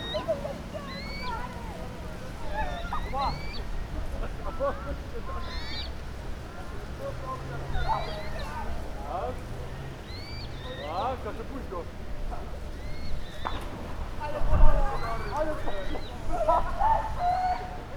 desperate calls of three Eurasian coot chicks. The parents took off towards towards the middle of the lake, leaving the chicks in the rushes. They were undecided whether they should follow the parents which were already about 250m away. I captured the exact moment when the chicks decided to leave the safety of the rushes and swim alone in the open lake after the parents. Also shouts of teenagers of the roof, boys throwing girls into the lake and competing in swimming. (sony d50)